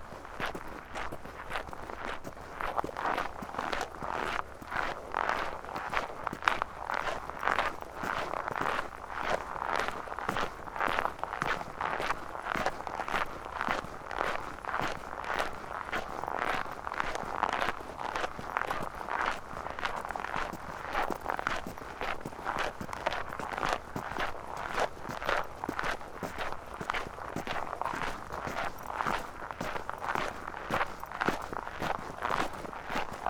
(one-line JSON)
{"title": "Plänterwald, Berlin - walk on snow", "date": "2014-01-26 16:55:00", "description": "Berlin, Plänterwald, walk on snow, cold Sunday late afternoon\n(Sony PCM D50)", "latitude": "52.49", "longitude": "13.49", "timezone": "Europe/Berlin"}